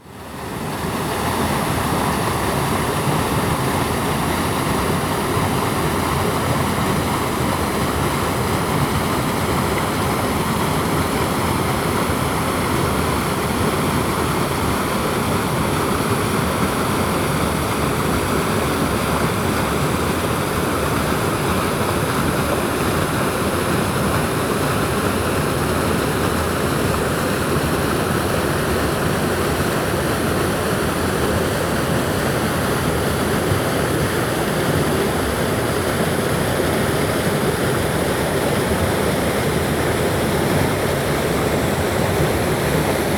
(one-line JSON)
{"title": "玉門關, 種瓜坑, Puli Township - sound of the river", "date": "2016-07-27 14:46:00", "description": "The sound of the river\nZoom H2n MS+XY +Spatial audio", "latitude": "23.96", "longitude": "120.89", "altitude": "420", "timezone": "Asia/Taipei"}